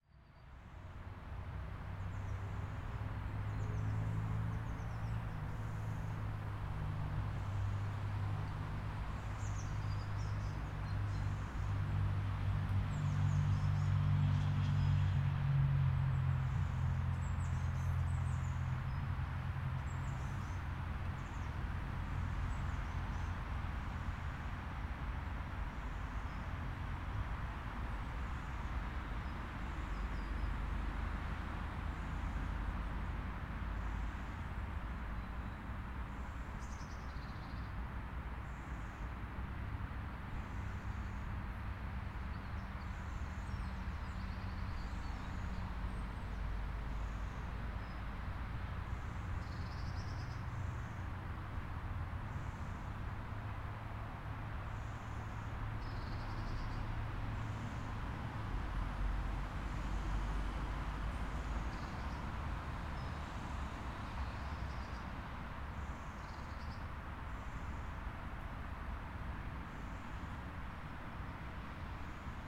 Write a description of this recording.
This is a special place I wanted to record, not well known, beetween the road and a residential area. A community of rabbits live there.